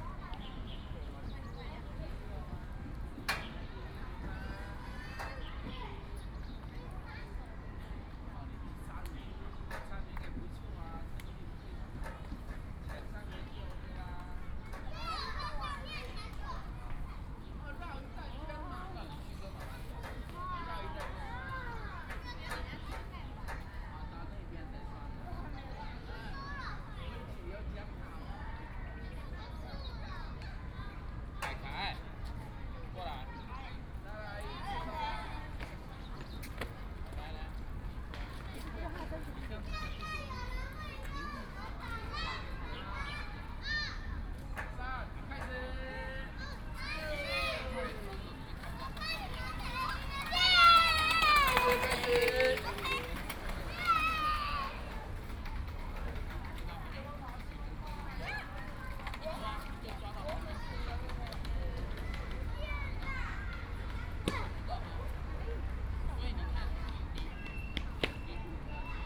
伊通公園, Taipei City - Kids and parents
Kids play area, Holiday in the Park, Sitting in the park, Traffic Sound, Birds sound
Please turn up the volume a little. Binaural recordings, Sony PCM D100+ Soundman OKM II
Zhongshan District, Taipei City, Taiwan, 4 April